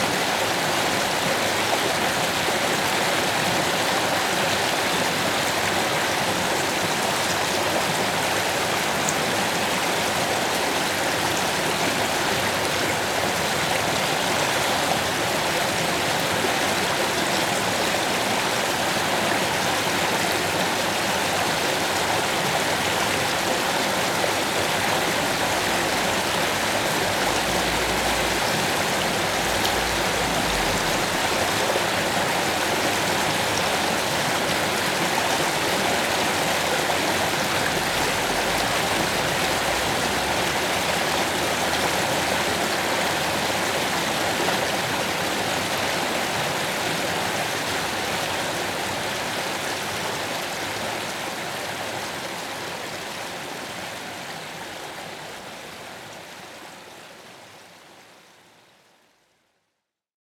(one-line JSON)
{"title": "Bastendorf, Tandel, Luxemburg - Bastendorf, Am Haff, small stream Lann", "date": "2012-08-07 11:15:00", "description": "Im Dorfzentrum auf einer kleinen Straße, die parallel zur Hauptdurchfahrtstsraße verläuft. Der Klang des kleinen, lebendigen Flusses Lann, der quer durch den Ort fliesst.\nIn the center of the town on a small street, parallel to the main street. The sound of the small, vivid stream Lann that flows through the town. In the background passing by traffic from the main street.", "latitude": "49.89", "longitude": "6.17", "altitude": "222", "timezone": "Europe/Luxembourg"}